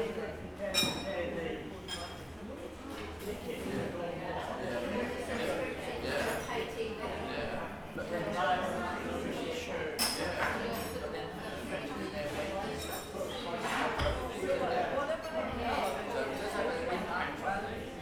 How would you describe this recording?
This recording was made in the stables cafe at Knightshayes Court. The canteen was about half full. Recorded on a Zoom H5